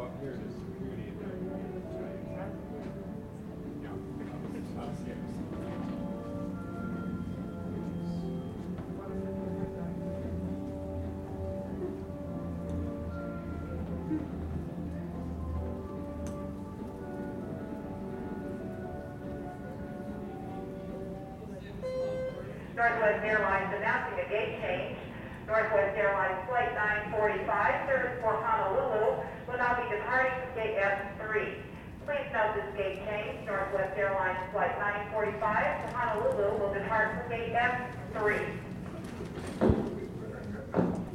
SeaTac Airport - SeaTac #2
Aboard the South Satellite shuttle subway train. I like the bilingual announcements but the ride is less than two minutes. I continued taping out to the concourse.
Washington, United States of America, 11 December 1998, 09:50